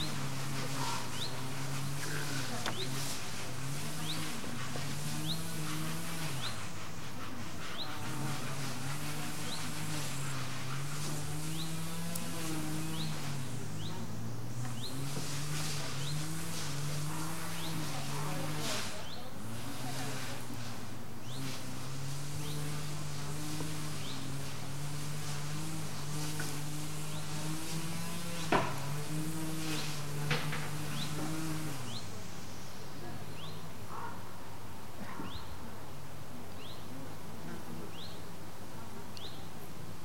Sasino, summerhouse at Malinowa Road, backyard - breakfast
breakfast time. typical soundscape for this area during the day. the lawnmowers roar from seven in the morning until sunset, electric and manual saws cut various objects continuously, the ground trembles due to hammer hits and drill howl. upgrading and finishing works never seem to be completed around here. we think it's a holiday resort for obsessive handyman who never take a break.
Poland